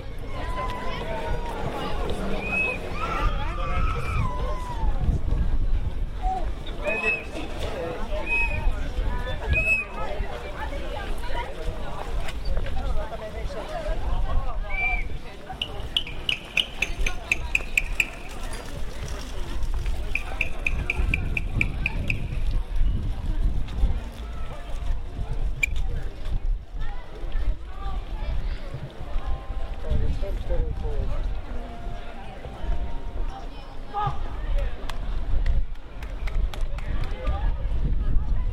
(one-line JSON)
{"title": "2. Peso da Regua, Lamego, Portugal. 23.06.2009 (the Porto wine way)", "latitude": "41.16", "longitude": "-7.79", "altitude": "58", "timezone": "Europe/Berlin"}